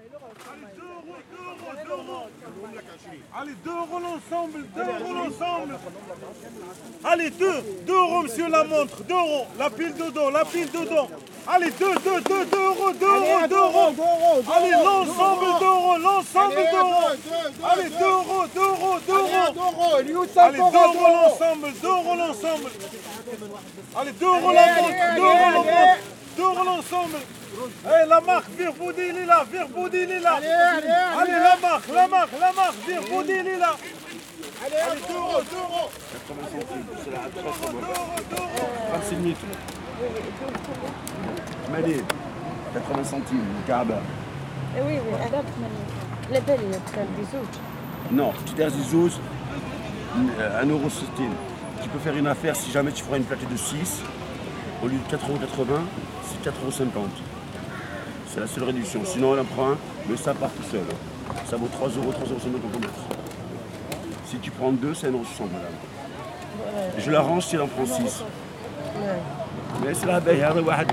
Avenue de Flandre, Paris, France - Flea market sellers [Avenue de Flandre]
Vide grenier brocante Avenue de Flandre.traveling.Pas cher.2 Euros les cds.
voix des vendeurs.Ambiance de rue.Traveling.
Walking across a Flea market Avenue de Flandre.Sellers'voices.street ambiance.Traveling.
/Binaural recording using roland cs10-em pluged into zoom h4n